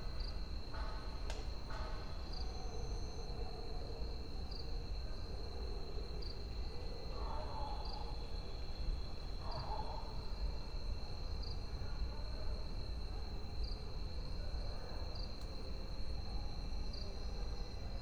20:19 Film and Television Institute, Pune, India - back garden ambience
operating artist: Sukanta Majumdar